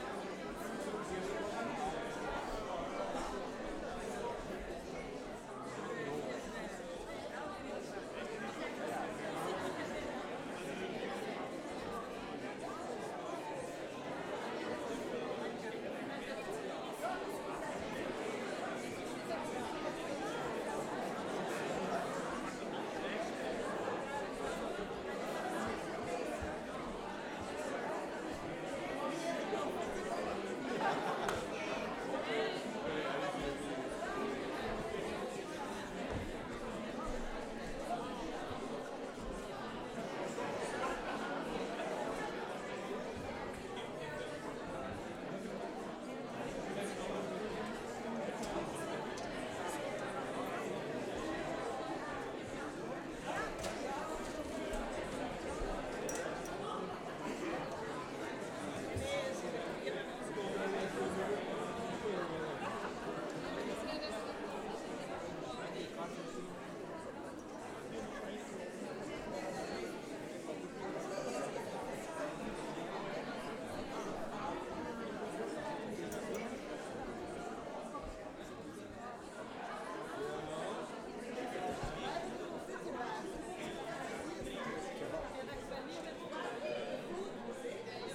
public entering theatre
recording stops where the performance starts